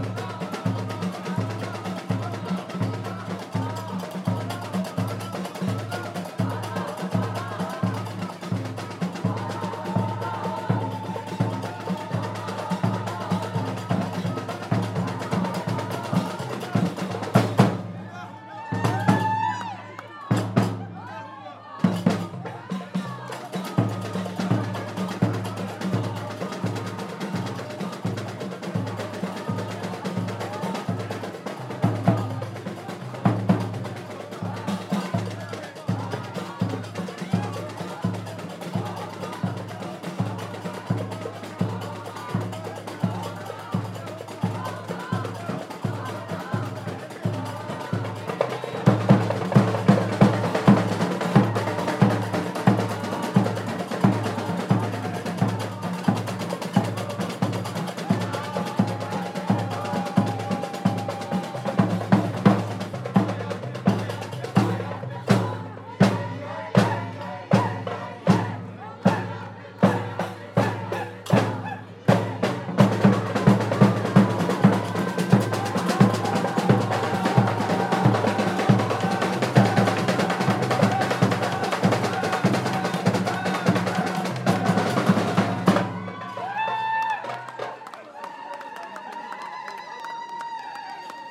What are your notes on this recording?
Traditional instruments (qraqeb, drums, trumpets), voices. Tech Note : Sony PCM-D100 internal microphones, wide position.